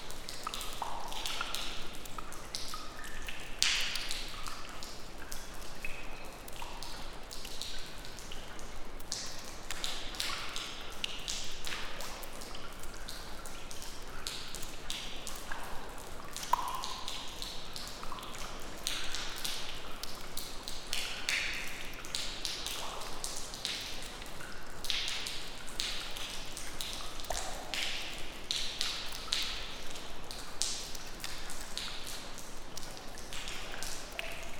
Baggböle kraftverk, Umeå. Raindrops from leaking roof#1
Baggböle kraftverk
Recorded inside the abandoned turbine sump whilst raining outside. Drips from leaking roof.